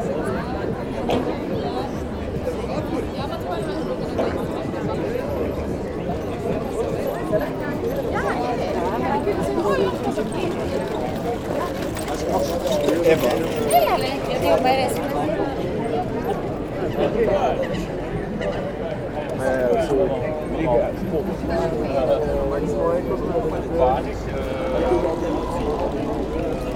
Festive atmosphere along the canal. People drink by the water and are happy to be together.